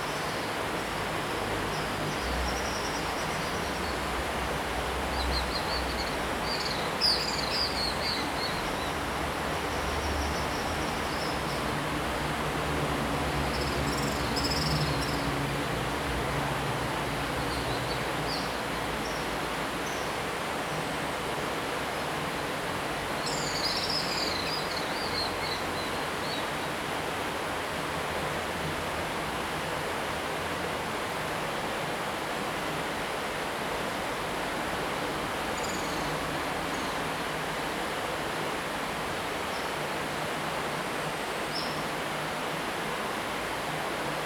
Swallow sounds, In the Viaduct below, The sound of water streams
Zoom H2n MS+ XY